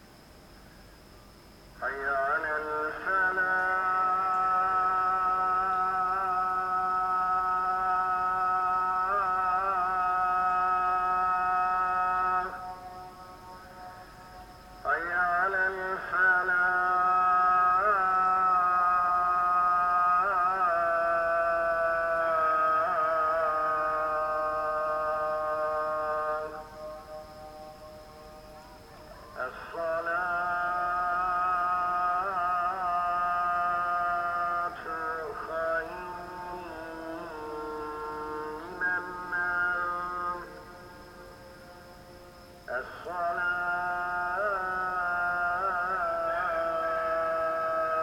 Morning prayer accompanied with the singing of roosters in Çıralı village